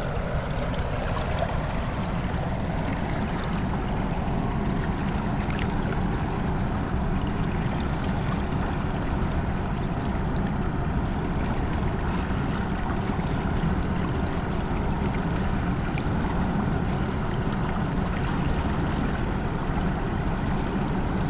{"title": "at the edge, mariborski otok, river drava - crushed water flow, rocks", "date": "2014-05-09 19:14:00", "latitude": "46.57", "longitude": "15.61", "altitude": "260", "timezone": "Europe/Ljubljana"}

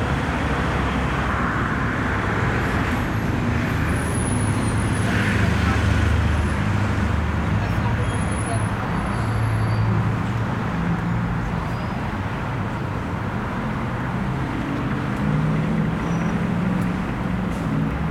{"title": "Avenue de la Gare, Goussainville, France - Site 3. Le Calvaire. Entrée du village. 1", "date": "2018-06-01 15:31:00", "description": "Ateliers Parcours commente Ambiances Avec les habitants de Goussainville le Vieux Village. Hyacinthe s'Imagine. Topoï. Alexia Sellaoui Segal, Ingenieur du son", "latitude": "49.02", "longitude": "2.47", "altitude": "68", "timezone": "Europe/Paris"}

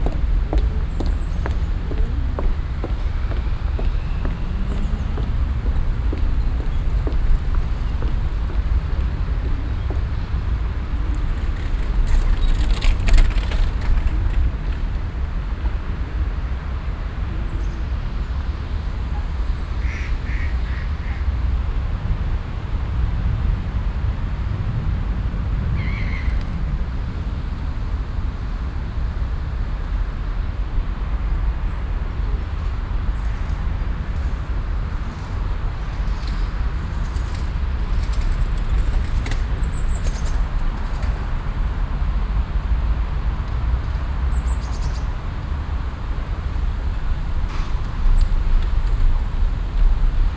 {"title": "Düsseldorf, Hofgarten, goldene Brücke - Düsseldorf, Hofgarten, Goldene Brücke", "date": "2008-08-21 10:20:00", "description": "Mittags auf der hölzernen \"GoldeRen Brücke\". Fussgängerschritte und radfahrer passieren umhüllt vom Verkehrslärm der umgebenden Strassen\nsoundmap nrw: topographic field recordings & social ambiences", "latitude": "51.23", "longitude": "6.78", "altitude": "47", "timezone": "Europe/Berlin"}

